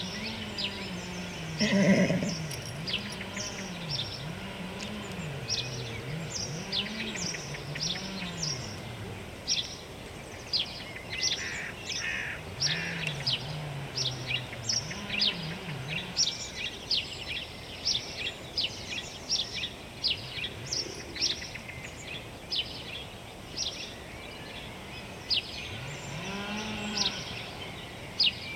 Chemin des Ronferons, Merville-Franceville-Plage, France - Birds and a pony
Birds and a pony during the Covid-19 pandemic, Zoom H3VR, Binaural
Normandie, France métropolitaine, France